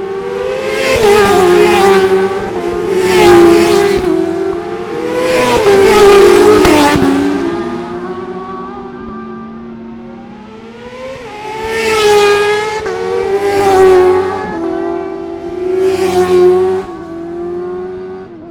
Scarborough, UK - motorcycle road racing 2012 ...
600cc qualifying ... Ian Watson Spring Cup ... Olivers Mount ... Scarborough ... open lavalier mics either side of a furry covered table tennis bat used as a baffle ... grey breezy day ... initially a bit loud ... with chiffchaff bird song ...